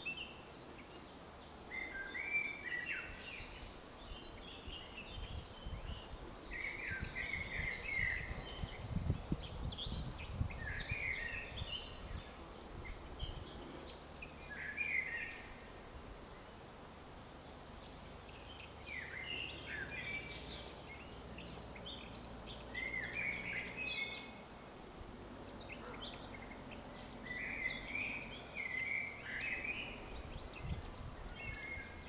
Horní Libchava, Česko - Ptáci / Birds
Birds singing on a forest road between the villages of Horní Libchava and Slunečná.
Liberecký kraj, Severovýchod, Česká republika